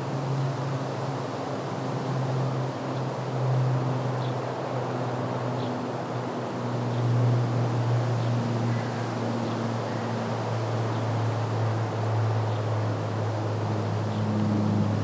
Neringos Lighthouse, Lithuania - Lighthouse
Recordist: Saso Puckovski. The recorder was placed about 20m to the right of the lighthouse on the ventilation unit. Other sounds include random tourists passing, frogs in the distance. Calm weather, light wind, sunny day. Recorded with ZOOM H2N Handy Recorder, surround mode.
Nida, Lithuania, 1 August 2016, 16:45